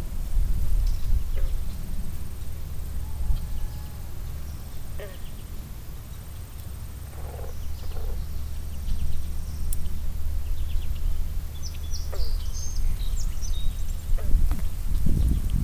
1Grass Lake Sanctuary - Pond Frogs
Frogs croaking and ribbiting in a small pond!
18 July 2010, ~01:00